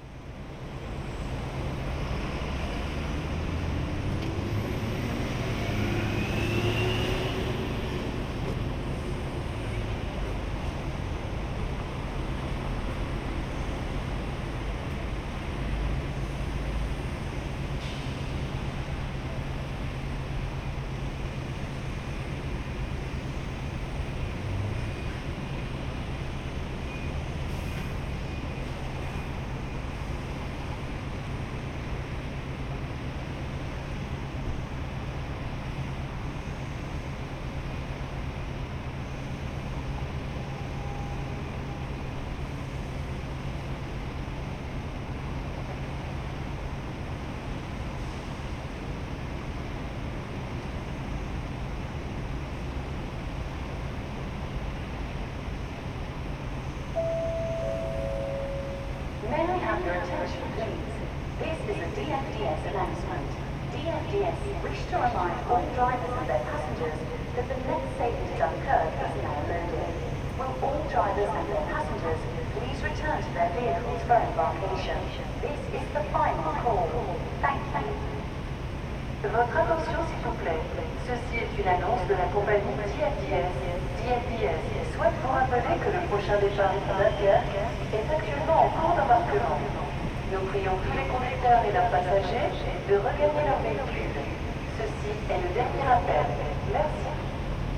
Dover, Dover, Vereinigtes Königreich - Dover ferry terminal
Dover ferry terminal, lorries, PA announcements for DFDS departure. Ambisonic recording, converted to binaural. Use of headphones recommended.
Zoom H3-VR